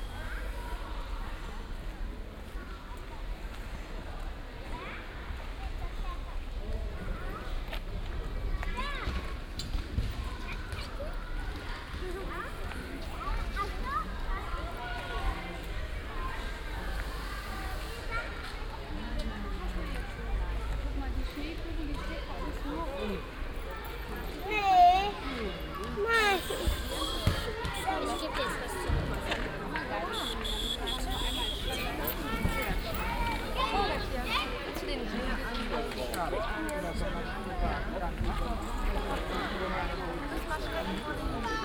südstadt strassenfest, nachmittags, verkaufstände, biertalk und das unvermeindliche einstimmen von klaus dem geiger
soundmap nrw:
social ambiences, topographic field recordings